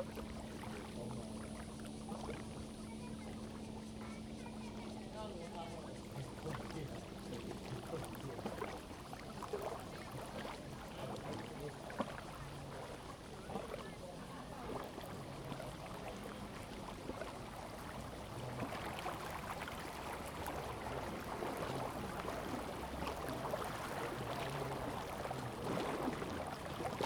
鯉魚潭, Shoufeng Township - In the lake shore
Very Hot weather, Yacht, Lake voice, Tourists
Zoom H2n MS+XY
Shoufeng Township, Hualien County, Taiwan, 28 August, 10:50